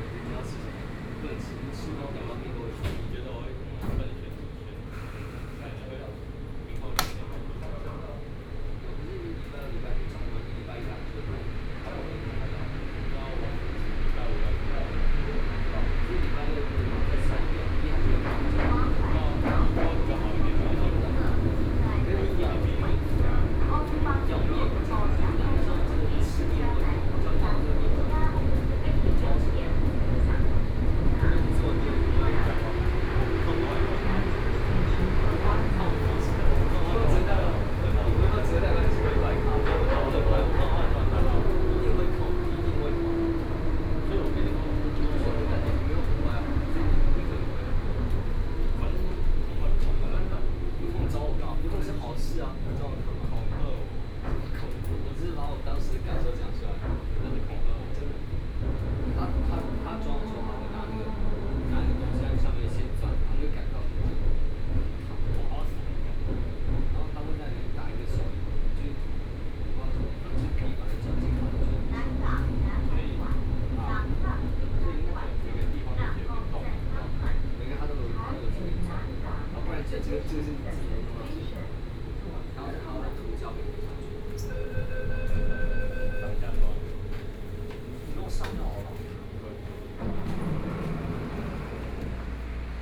114台灣台北市內湖區 - Neihu Line (Taipei Metro)
from Huzhou Station to Taipei Nangang Exhibition Center Station, Binaural recordings, Sony PCM D50 + Soundman OKM II